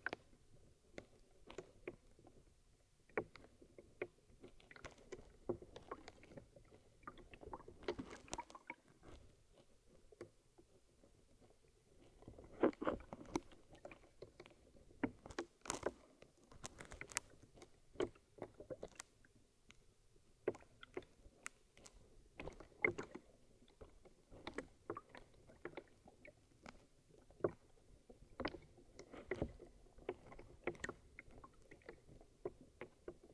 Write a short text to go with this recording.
contact microphone placed between two plastic bottles in half frozen river